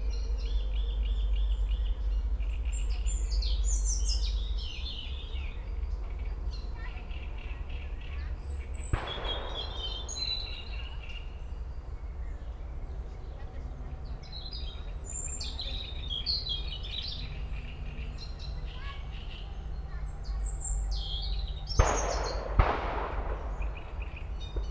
aleja Spacerowa, Siemianowice Śląskie - birds, kids, shots
aleja Spacerowa, Siemianowice, playground at the leisure and nature park, kids playing, birds (robin, great read warbler) singing, heavy shooting from the nearby range.
(Sony PCM D50, DPA4060)